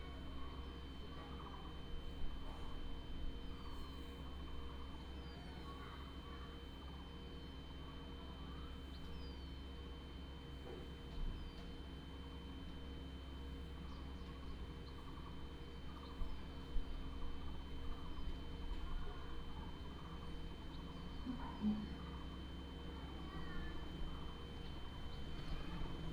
Tuban, Daren Township, Taitung County - In aboriginal tribal streets
In aboriginal tribal streets, Bird cry, traffic sound, Air conditioning sound
Binaural recordings, Sony PCM D100+ Soundman OKM II